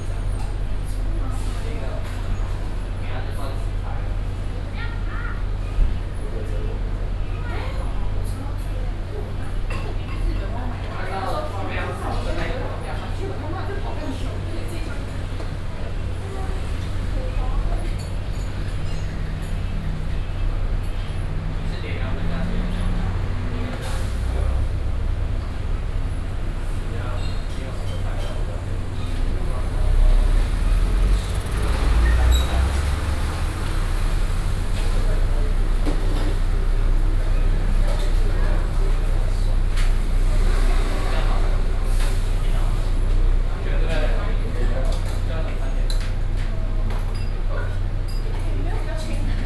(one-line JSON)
{"title": "Taipei, Taiwan - In the restaurant", "date": "2012-06-04 17:53:00", "description": "In the restaurant, Sony PCM D50 + Soundman OKM II", "latitude": "25.03", "longitude": "121.52", "altitude": "15", "timezone": "Asia/Taipei"}